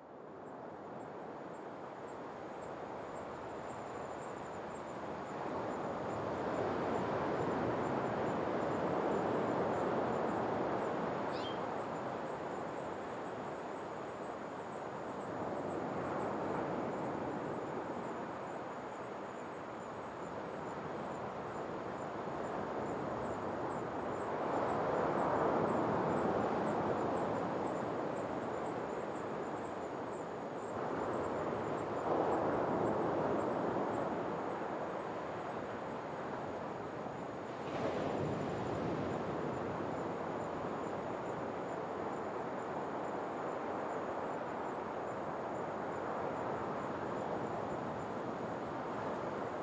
Palaha Sea Walk, Makefu, Niue - Palaha Atmos
June 2012